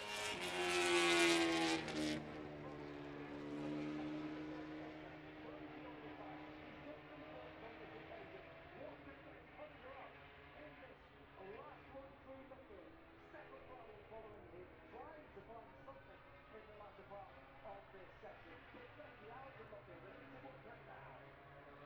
Silverstone Circuit, Towcester, UK - british motorcycle grand prix 2022 ... moto grand prix ......
british motorcycle grand prix 2022 ... moto grand prix qualifying one ... zoom h4n pro integral mics ... on mini tripod ...
England, United Kingdom, 6 August 2022